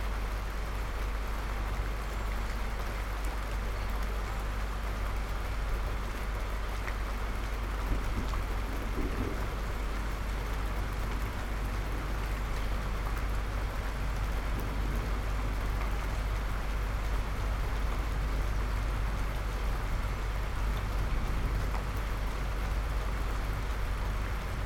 Recording of a summer thunderstorm in Antwerp.
MixPre6 II with mikroUši Pro.